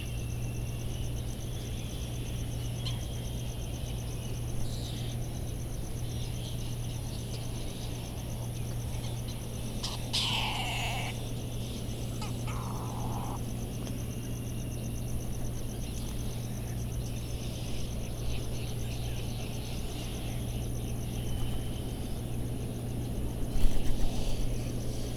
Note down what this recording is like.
Sand Island ...Midway Atoll ... Bonin Petrel calls and flight calls ... recorded in the dark sat on the path to the All Hands Club ... lavalier mics either side of a fur covered table tennis bat ... mini jecklin disk ... calls and bill clappering from laysan albatross ... calls from black noddy and white terns ... cricket ticking the seconds ... generators kicking in and out ...